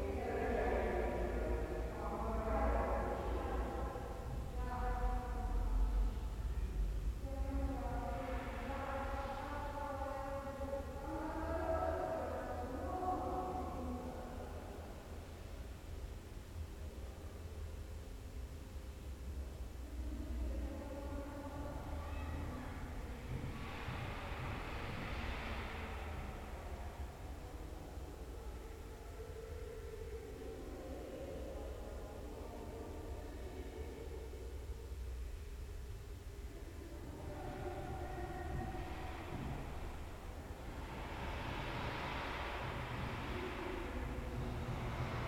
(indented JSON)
{
  "title": "The palace of students, Dnipro, Ukraine - The palace of students - Room 27 [Dnipro]",
  "date": "2017-05-26 15:30:00",
  "latitude": "48.46",
  "longitude": "35.07",
  "altitude": "100",
  "timezone": "Europe/Kiev"
}